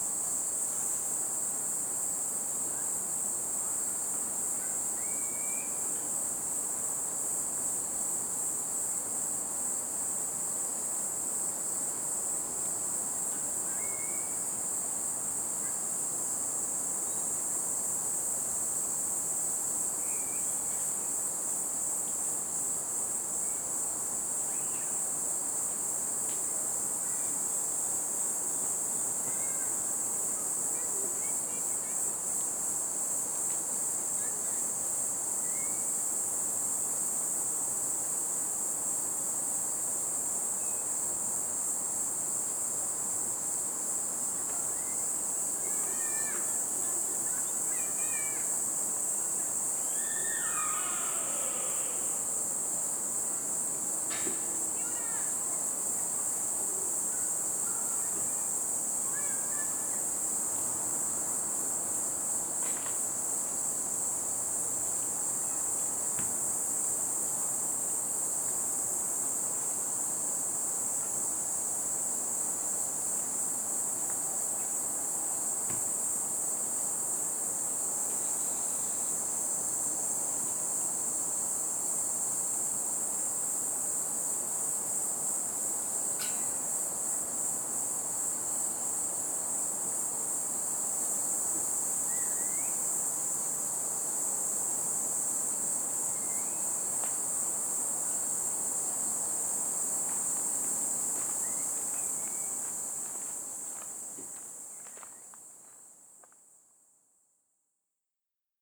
{"title": "대한민국 서울특별시 산34-6 우면동 - Umyun-dong, Echo Park, Water deer", "date": "2019-10-03 22:41:00", "description": "Umyun-dong, Echo Park, Water Deer howling\n우면동 생태공원, 고라니 울음", "latitude": "37.47", "longitude": "127.02", "altitude": "97", "timezone": "Asia/Seoul"}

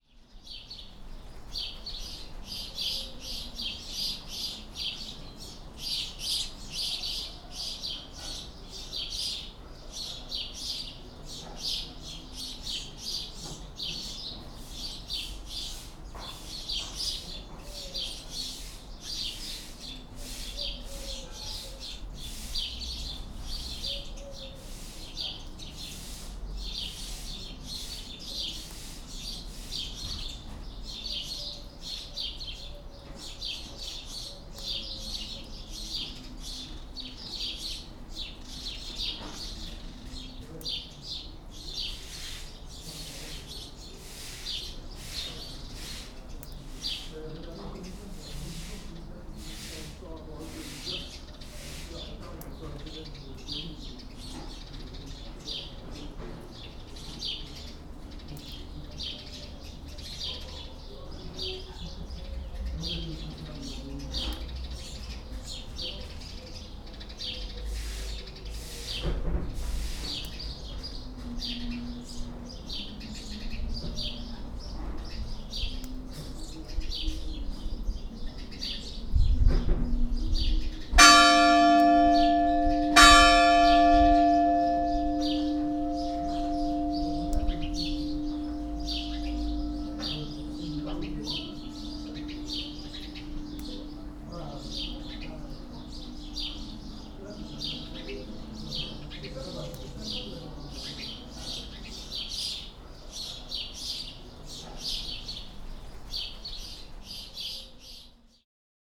{"date": "2011-07-13 13:41:00", "description": "Ispagnac, Rue de lEglise, the bells.", "latitude": "44.37", "longitude": "3.54", "timezone": "Europe/Paris"}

